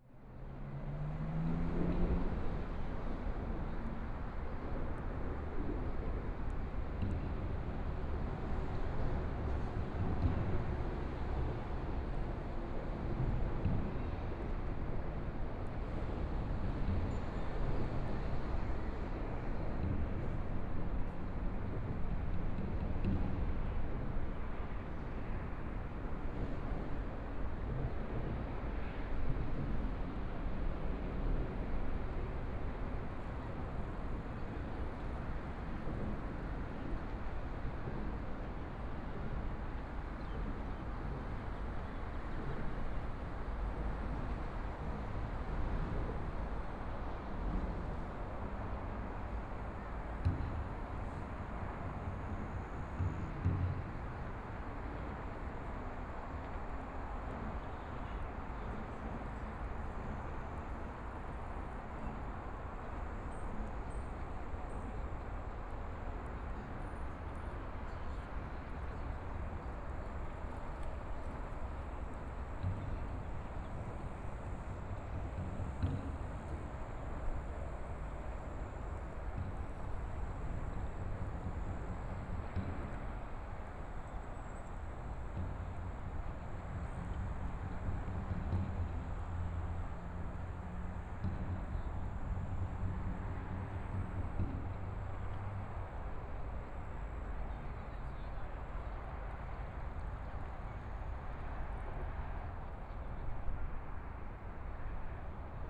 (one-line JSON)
{"title": "大佳河濱公園, Taipei City - walking in the Park", "date": "2014-02-16 16:35:00", "description": "walking in the Park, Traffic Sound, Sound from highway, Holiday, Sunny mild weather, Birds singing, Binaural recordings, Zoom H4n+ Soundman OKM II", "latitude": "25.07", "longitude": "121.53", "timezone": "Asia/Taipei"}